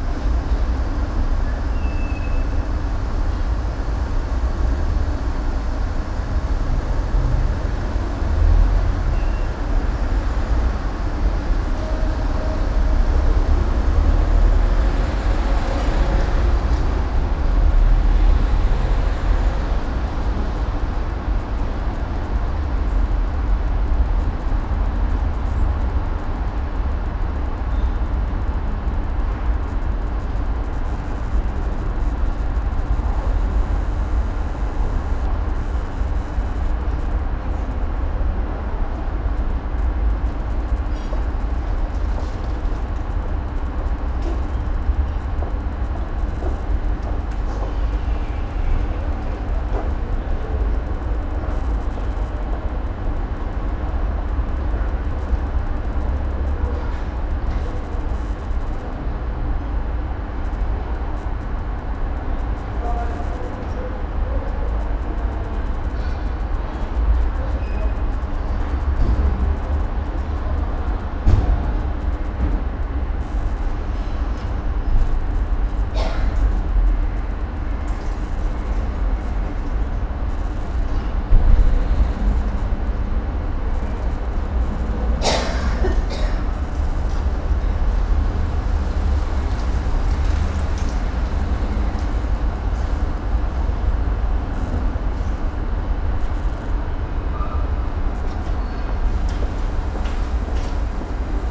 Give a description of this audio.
Neon light from the café des artistes, it was a bit too high so microphones arent as close as I wanted them to be, I will go back there with a boom pole. PCM-M10, internal microphones.